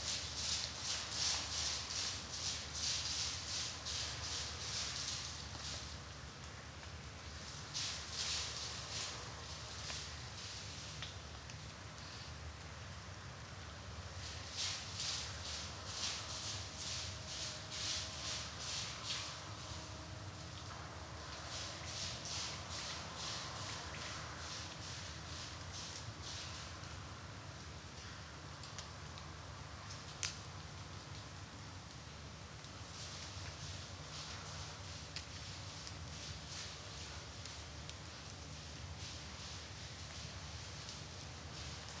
Negast forest, Scheune, Rügen - Deer in the woods
Shy deer is approaching the microphone, but then alerted, mostly very quiet recording BUT 0db peak at 25 - 40sec - BE AWARE
Overnightrecording with Zoom F4 - diy SASS with 2 PUI5024 omni condenser mics